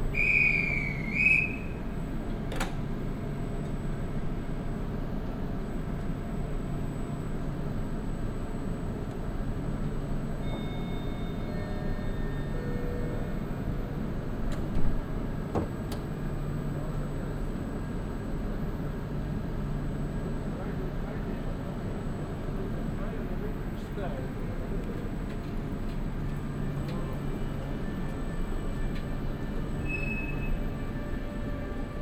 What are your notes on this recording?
At the villages terminal station. A train arrives. The sounds of the train guards whistle, the beep signal as the doors close and the departure of the regional train parallel to a man talking to his dog. Kautenbach, Bahnhof, Zugabfahrt, Am Bahnhof des Dorfes. Ein Zug fährt ein. Das Geräusch der Pfeife des Zugschaffners, das Tut-Signal, wenn die Türen schließen und die Abfahrt des Regionalzuges. Gleichzeitig redet ein Mann mit seinem Hund. Kautenbach, gare, départ d'un train, À la gare du village. Un train entre en gare. Les bruits du contrôleur qui siffle, le signal sonore lorsque les portes se referment et le départ du train en parallèle avec un homme qui s’adresse à son chien. Project - Klangraum Our - topographic field recordings, sound objects and social ambiences